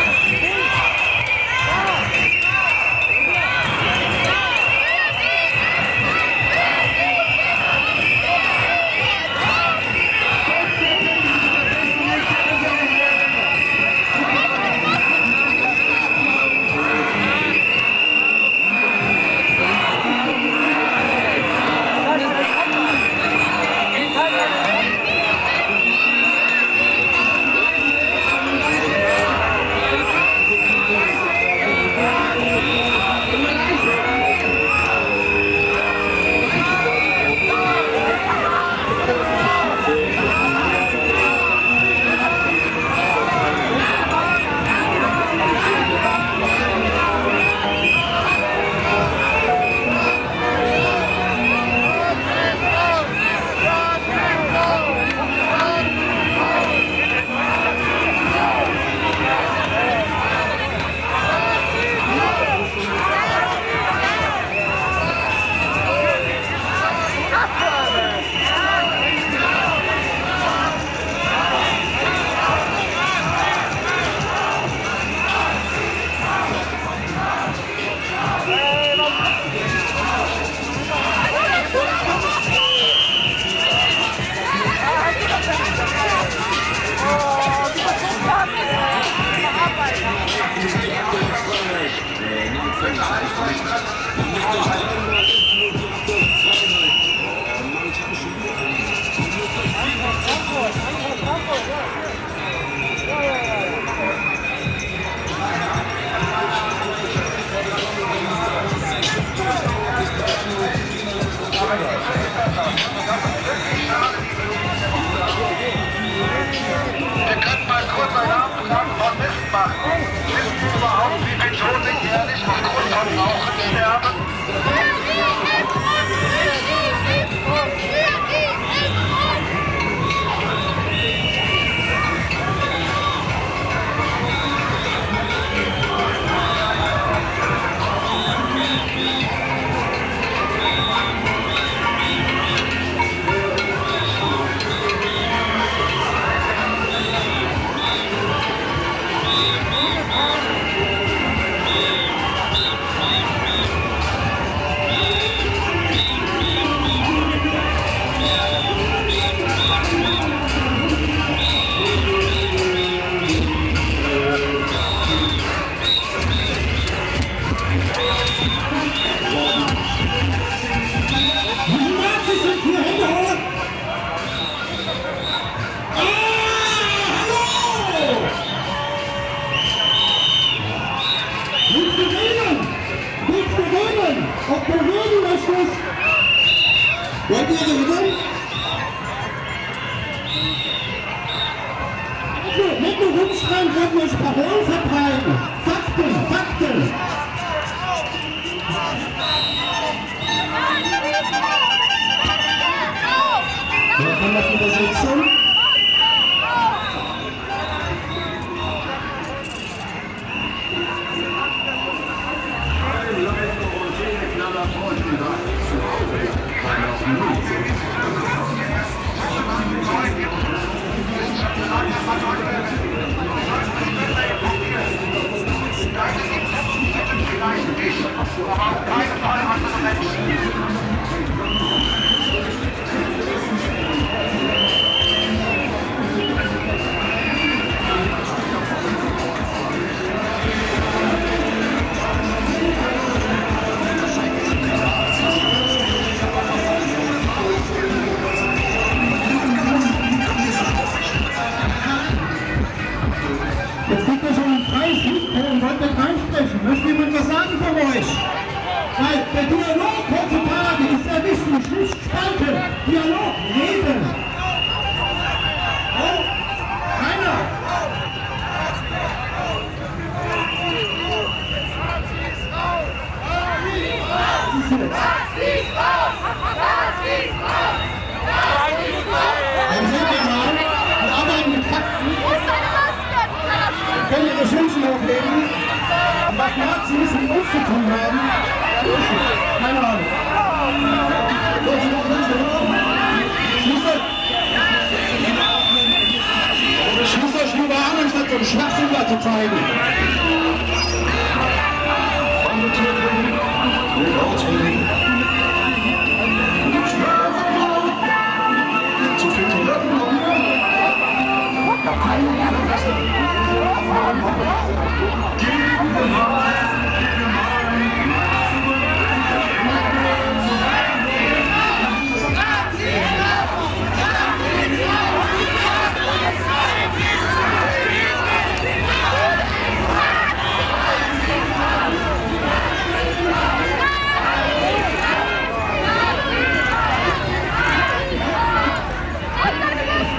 Liebigstraße, Frankfurt am Main, Deutschland - 12th February 2022
This is a recording I was hesitating to upload. It documents sounds of a demonstration of people, who think that their freedom is in danger cause of the pandemic measures that were taken by local governments as well as the federal government in Germany. So this documentation does not seek to propagate the topics the demonstraters would like to spread, but rather to give them to listen to as a puzzeling event. The recording starts at the park and walks within the domonstration for a while at different heights. At a certain point I stand aside and the demonstrators pass by. I join them again until the demonstration is stopped due to certain terms (wearing masks beyond others) they did not fulfill. Several police anouncements are heard. I then switched sides and stand with some people who demonstrated against this demonstration, shouting slogans like: "Maske auf, Nazis raus".